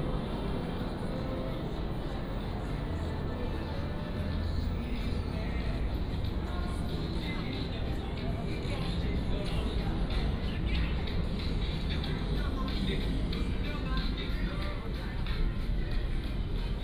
Seosang-dong, Gimhae-si, Gyeongsangnam-do - Downtown
Walking through the different neighborhoods and shops area, Traffic Sound
Gyeongsangnam-do, South Korea, 15 December 2014